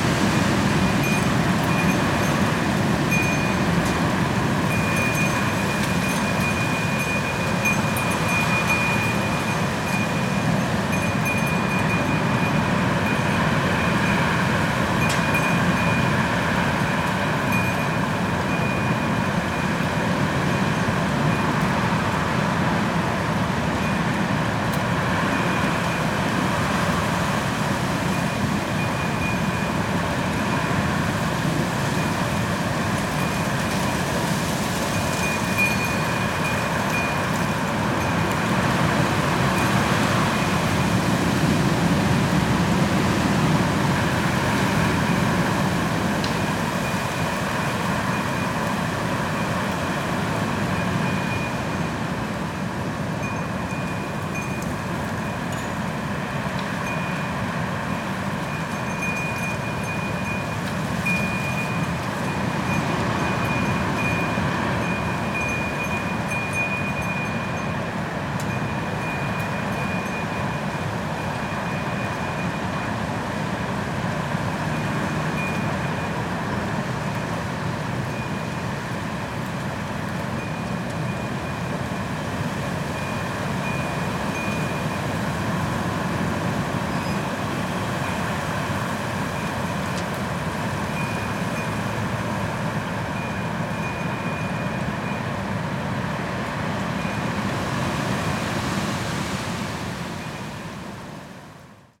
Pod Borinou, Nitra, Slovensko - December wind
Nitra, Slovakia, (6.12.2020, 22:30)
Recorded with AT4022s and MixPre6